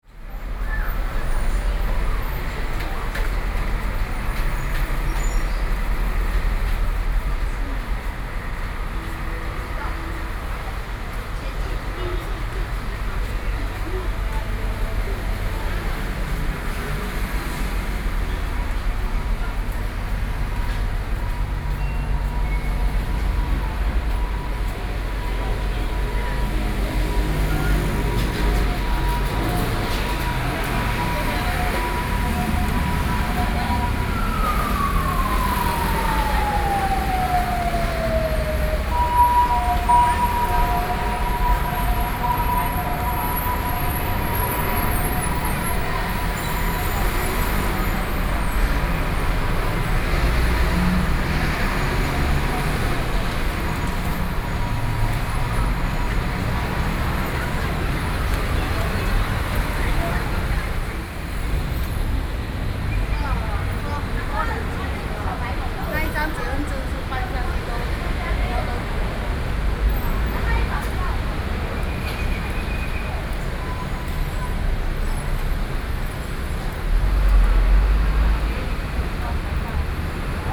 walking in the Noisy street, Sony PCM D50 + Soundman OKM II